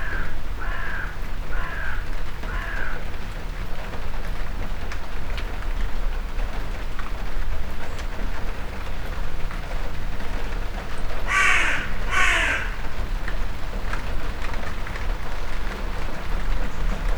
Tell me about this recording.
It's 5.30am and the pigeons are gone leaving the crows in charge this morning who are probably in the apple tree 20 paces away sheltering from this shower of rain. MixPre 6 II with 2 x Sennheiser MKH 8020s well inside the garage door.